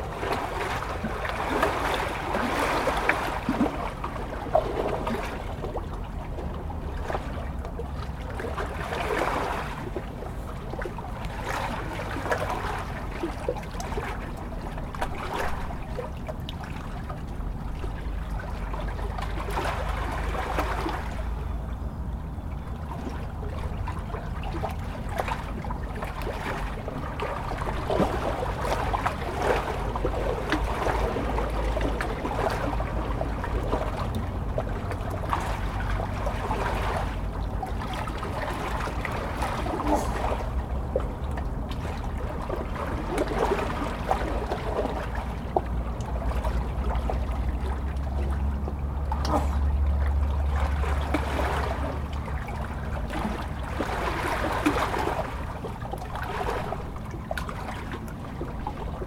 Chemin du Lac, Tresserve, France - Dans les pierres.
Au bord de l'eau dans les pierres, clapotis de l'eau, circulation sur la route voisine.
18 August, 11:30